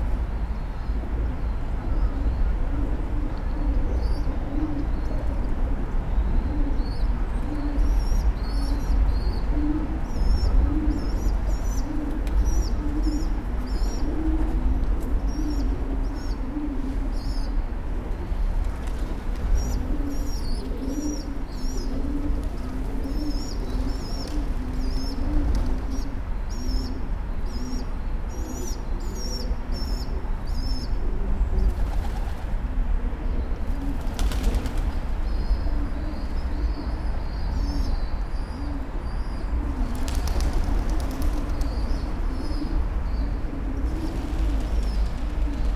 Daniela Keszycki bridge, Srem - under the bridge
recording under the bridge between massive concrete pillars. plenty of piegons living there. you can hear their chirps and wing flaps bouncing of the sides of the pillars. at one point one of the birds drops a big piece of bread into the river. it's a busy part of town so there are a lot of sounds of traffic on the bridge. a group of teenagers walking on the bridge listening to hiphop on a portable speaker. The way the music reverberated under the bridge is vey interesting. (Roland R-07 internal mics)